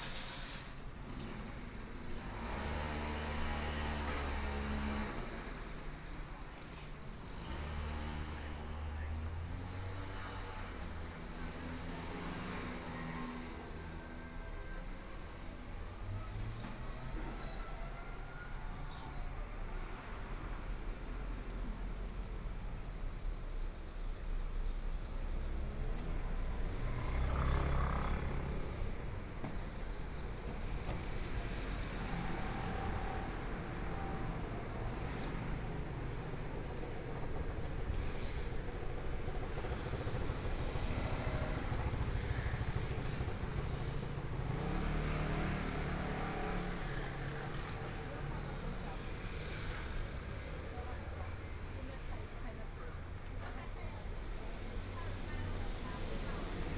walking on the Road, Traffic Sound, Various shops voices
Binaural recordings
Zoom H4n+ Soundman OKM II